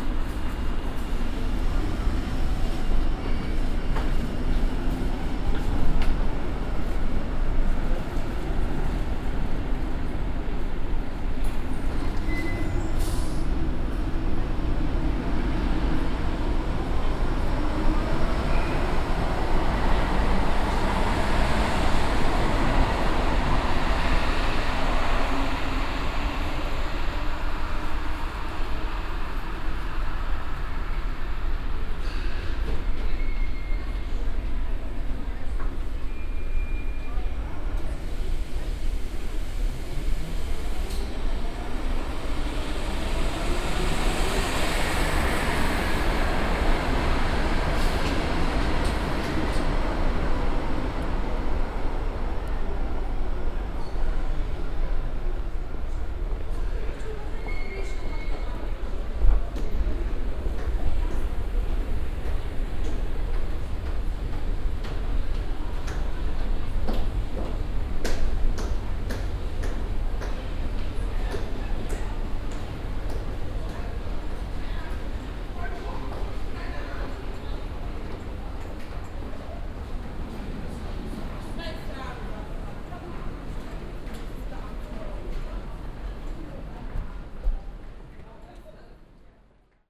Düsseldorf, main station, sub way stop - düsseldorf, hbf, u-bahn haltestelle
At the subway stop of the main station.
soundmap nrw: social ambiences/ listen to the people - in & outdoor nearfield recordings
24 January 2009